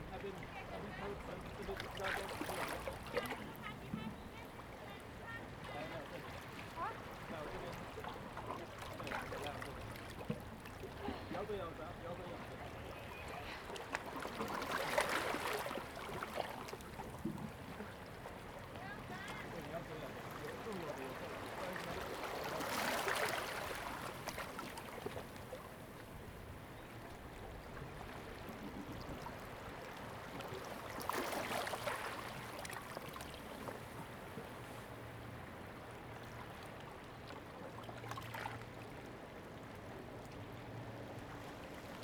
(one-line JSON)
{"title": "椰油村, Koto island - Sound tide", "date": "2014-10-28 15:36:00", "description": "Small port, Sound tide\nZoom H2n MS +XY", "latitude": "22.05", "longitude": "121.51", "altitude": "12", "timezone": "Asia/Taipei"}